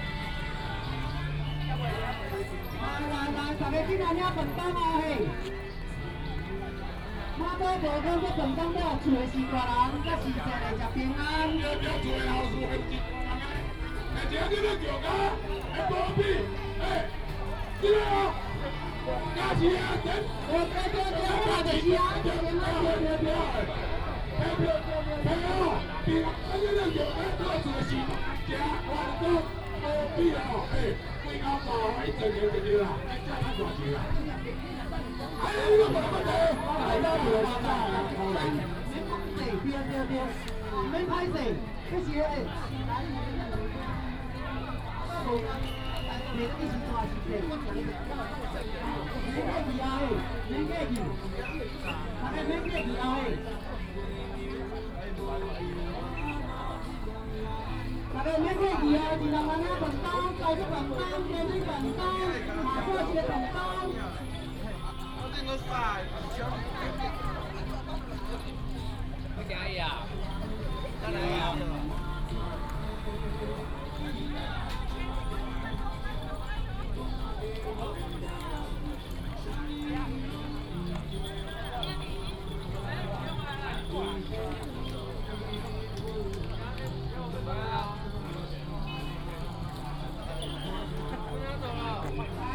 褒忠鄉龍岩村, Yunlin County - at the corner of the road
Matsu Pilgrimage Procession, People are invited to take free food, At the corner of the road